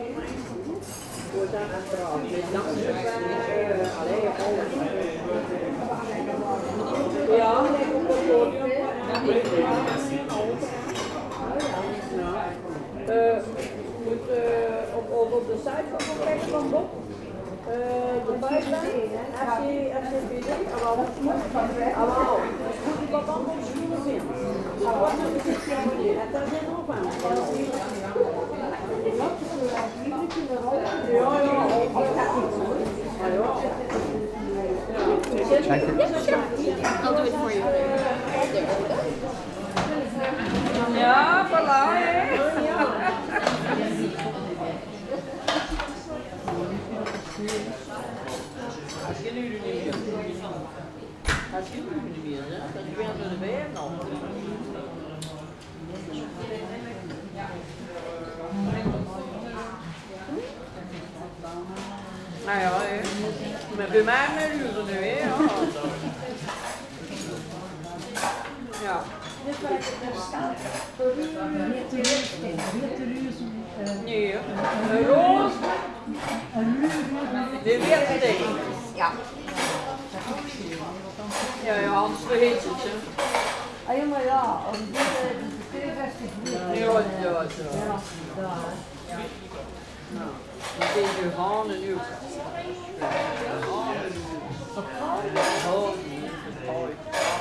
Gent, België - In the pastry shop
Aux Merveilleux de Fred (name in french), Mageleinstraat. An establishment where pastries and coffee are sold : it’s delicious and friendly. Terrible and adorable grandmothers !
Gent, Belgium